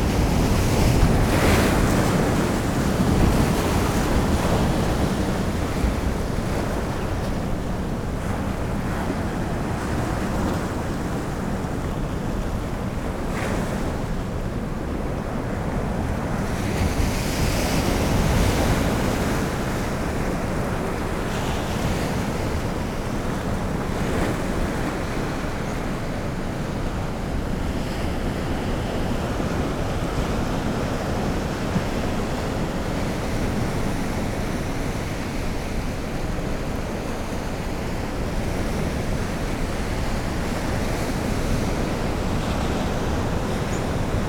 Whitby, UK - high tide ...
high tide ... lavaliers clipped to sandwich box ... bird calls from ... redshank ... rock pipit ... oystercatcher ... black-headed gull ... herring gull ...